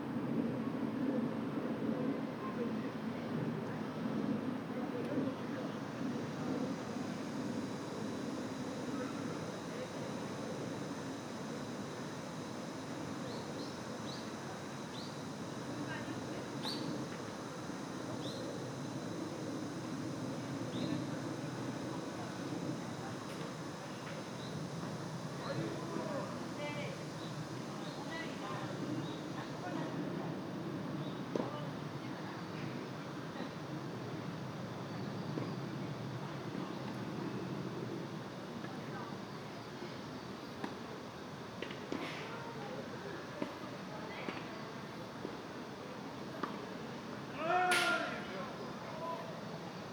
대한민국 서울특별시 서초구 양재동 126-1 - Yangjae Citizens Forest, Tennis Court, Magpie, Cicada
Yangjae Citizens Forest, Tennis Court, Magpie, Cicada
양재시민의숲, 테니스치는 사람들, 까치, 매미